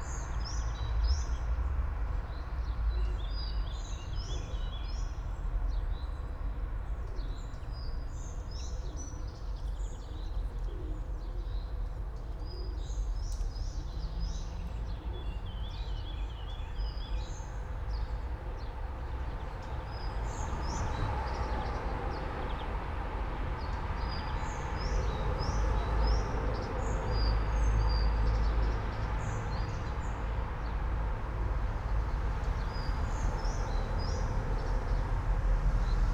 all the mornings of the ... - apr 30 2013 tue